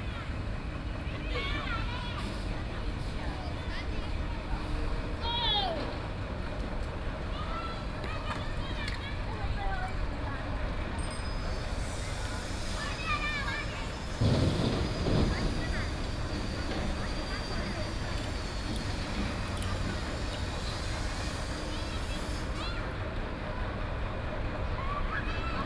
板橋石雕公園, Banqiao Dist., New Taipei City - in the Park
in the park, Children are Playing, Sound from the construction site